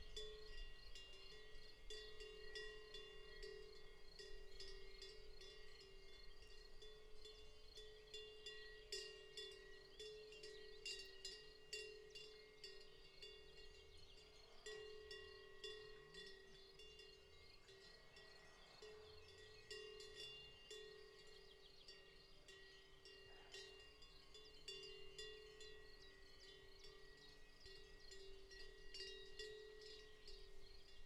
Tolmin, Slovenia - Cows with bells passing.
A shepherd chases cows to a nearby meadow. Lom Uši pro, MixPre II
Slovenija, June 2022